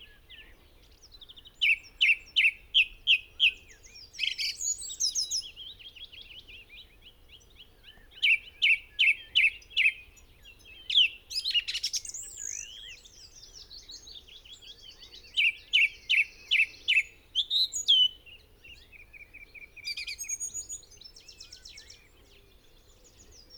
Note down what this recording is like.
song thrush song ... Olympus LS 14 integral mics ... bird call ... song ... willow warbler ... chaffinch ... crow ... goldfinch ... blackbird ... pheasant ... recorder clipped to branch ...